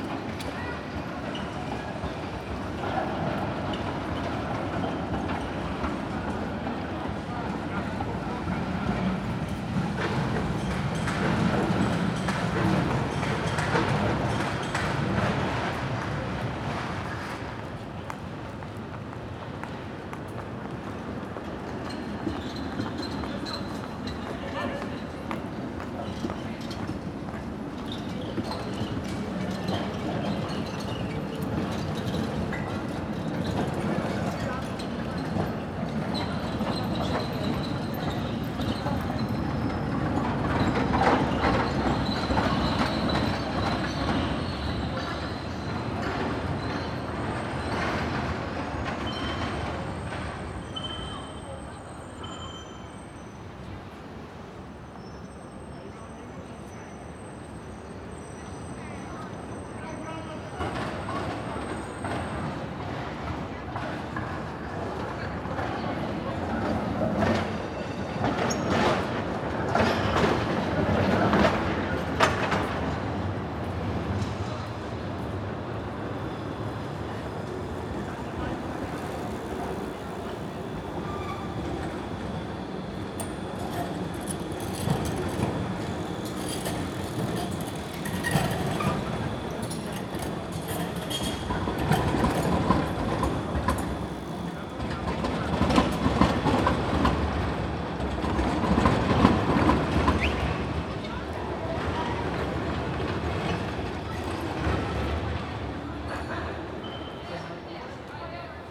Poznnan, downtown, near Okraglak office building - evening tram activity
many trams cross their way at this intersection distributing denizens to all parts of the city. seems that they have quite a busy schedule as trams pass here continually. the squeal of wheels on the tracks and car rattle reverberates nicely off the old tenements.
13 October 2014, ~19:00